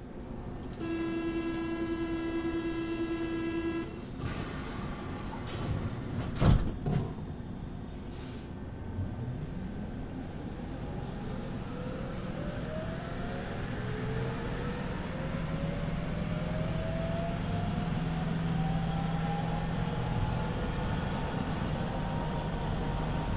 journey from Porte de Douai to Porte de Valenciennes - Lille.
Lille, France